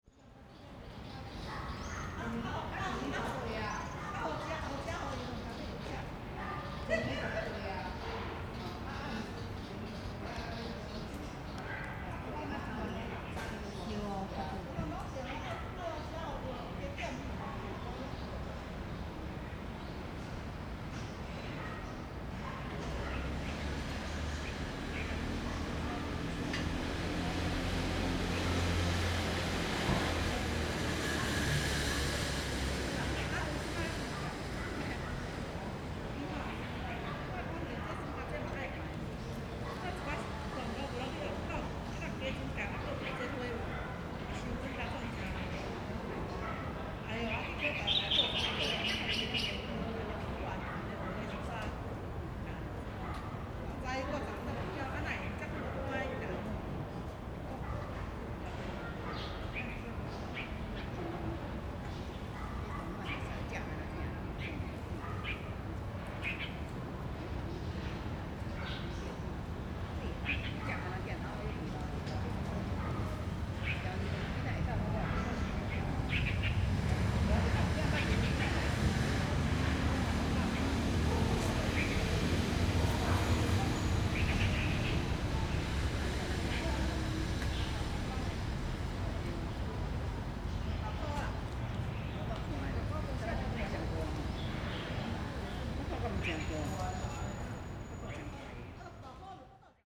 五華公園, Sanchong Dist., New Taipei City - In the park
in the Park, Woman, Birds singing, Traffic Sound
Rode NT4+Zoom H4n
Sanchong District, New Taipei City, Taiwan, 15 March 2012